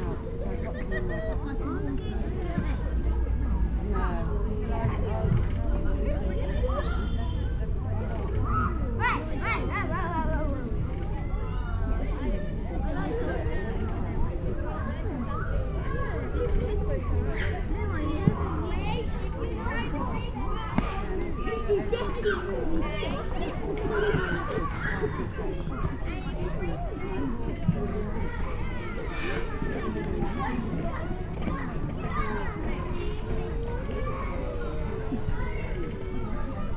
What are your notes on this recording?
A sunny day in a busy town centre play area.